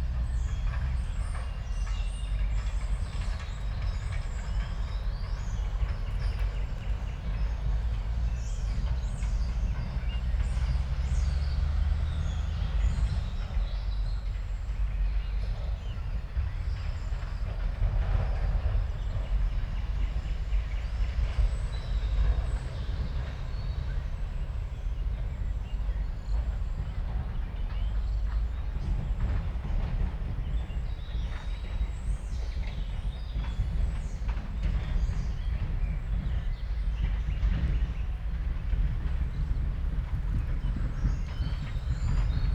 park Pszczelnik, Siemianowice Śląskie - park ambience /w levelling works
lorries going back and forth, dumping rubble and levelling it, near park Pszczelnik, Siemianowice Śląskie
(Sony PCM D50, DPA4060)
Siemianowice Śląskie, Poland, 21 May 2019